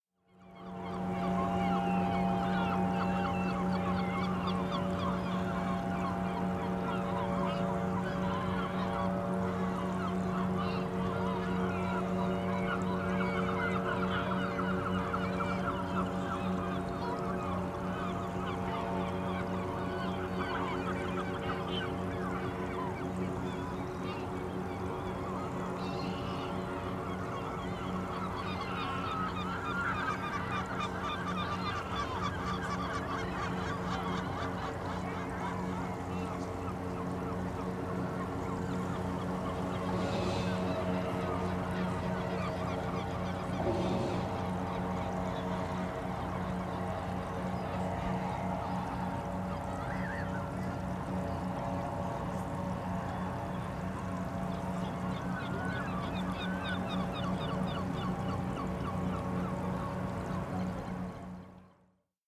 Cais da Estiva, Porto, Portugal - Cais da Estiva, Porto
Cais da Estiva, Porto. Mapa Sonoro do Rio Douro. Ribeira, Porto, Portugal. Douro River Sound Map
July 2011